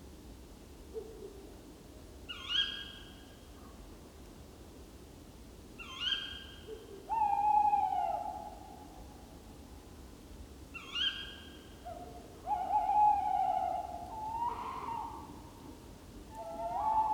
Negast forest, Schupperbaum, Rügen - Owl [Waldkauz] concerto #3
Waldkauzes in the autumn woods - the higher pitched "ki-witt" is the female call - the classical "huuu-huu" is male owl - there seem to be more than 2?
overnight recording with SD Mixpre II and Lewitt 540s in NOS setup
Mecklenburg-Vorpommern, Deutschland, 12 November 2021